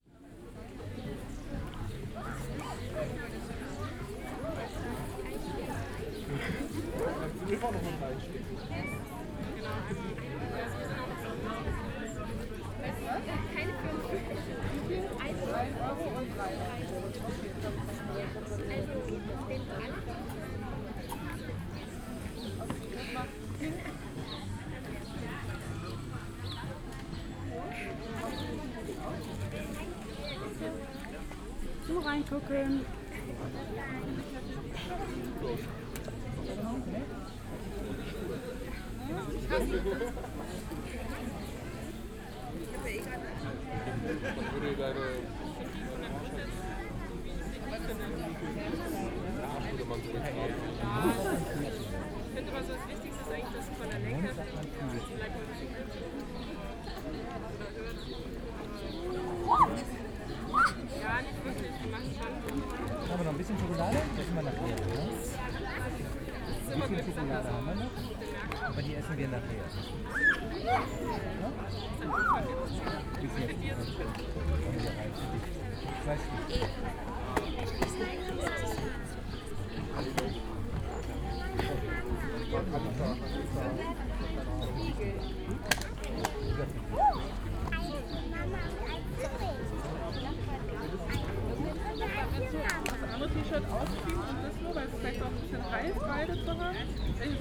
berlin, lohmühle wagenburg - fleemarket ambience
people gathering at the sunday fleemarket at Lohmühle laager
(SD702 DPA4060)
Berlin, Germany, 12 May 2013